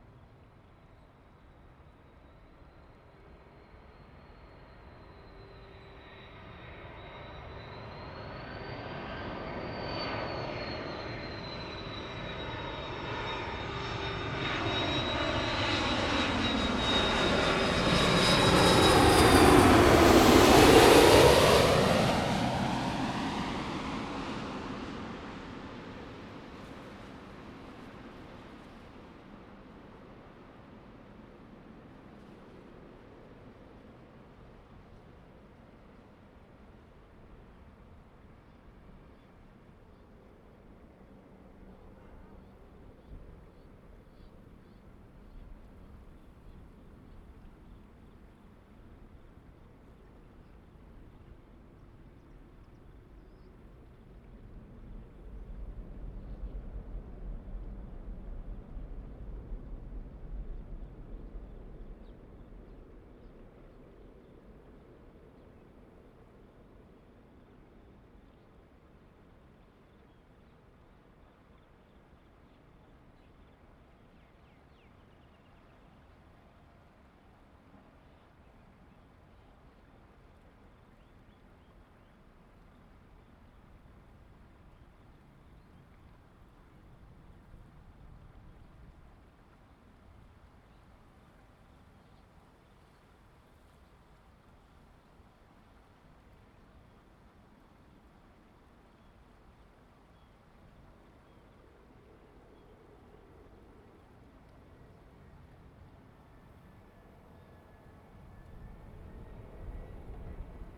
Under the airway, The plane landed, The plane was flying through, Zoom H2n MS+XY